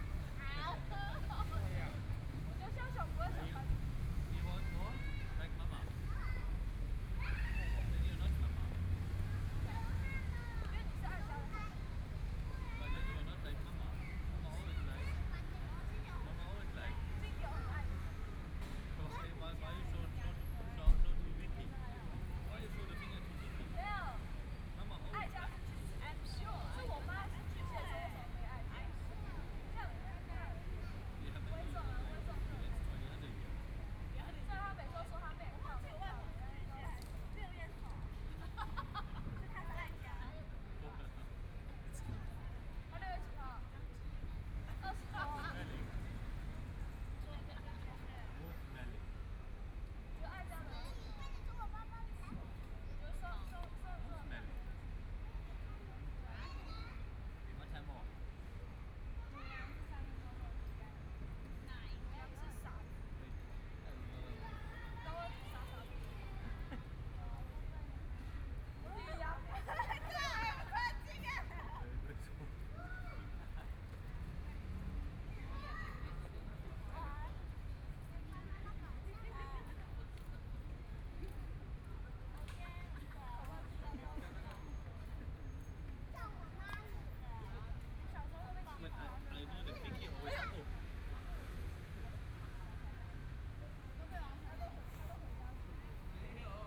Shuangcheng St., Taipei City - Night in the park
Night in the park, Traffic Sound, Kids game noise, Voice chat among high school students
Please turn up the volume a little.
Binaural recordings, Zoom 4n+ Soundman OKM II